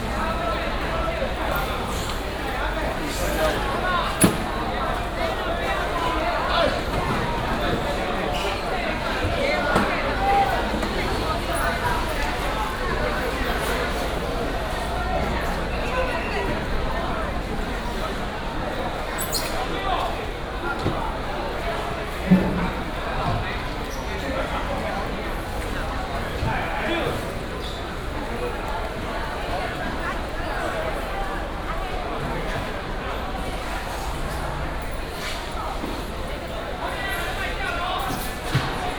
Walking in the Fish wholesale market, Traffic sound
Taipei City, Wanhua District, 萬大路533號, 6 May